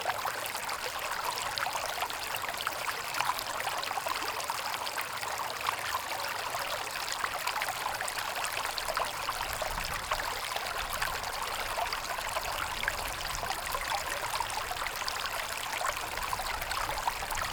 Via Fossa Cieca, Massa MS, Italia - Frigido
Una registrazione in "close up" delle acque del fiume Frigido, che scorre sotto al ponte di ferro.
Massa MS, Italy, 8 August